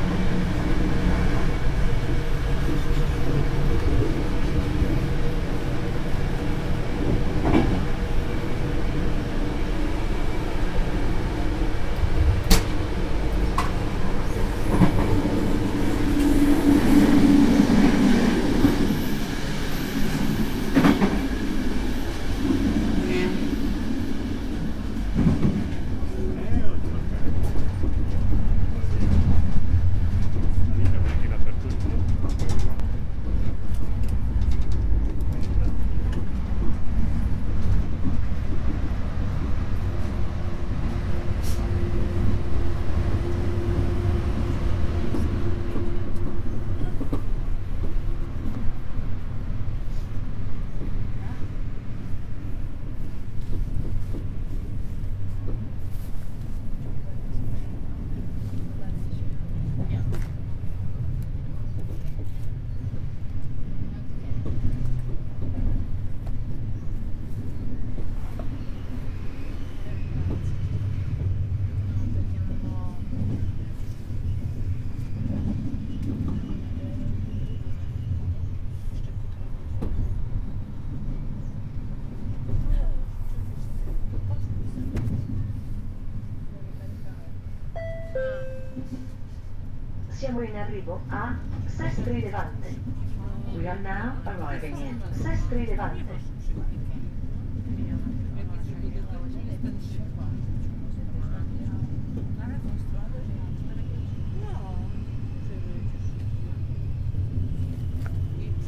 Sestri Levante, Metropolitan City of Genoa, Italie - Arrival in train in Sestri Levante
in an compartment of the italian train
dans le compartiment d'un train italien
binaural sound
son aux binauraux